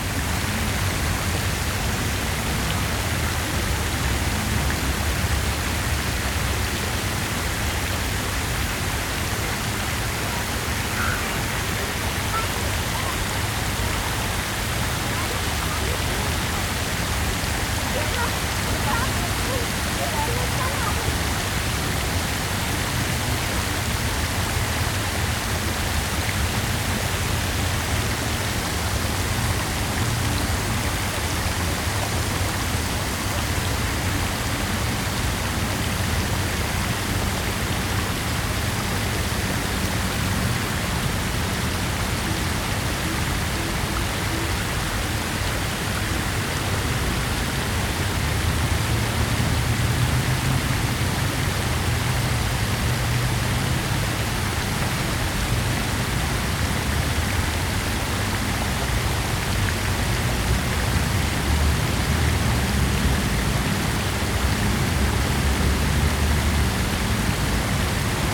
Karlsplatz fountain, Vienna
binaural recording of the big fountain at Karlsplatz
Vienna, Austria, 8 August 2011